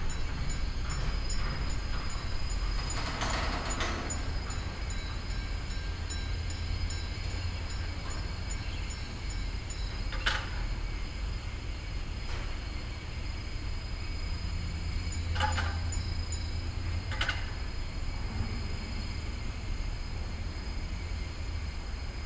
Niehler Hafen, Köln - container terminal ambience
evening hours at the container terminal Köln Niehl harbour, Westkai, container crane at work, loading and unloading of trucks
(Sony PCM D50, DPA4060)
Cologne, Germany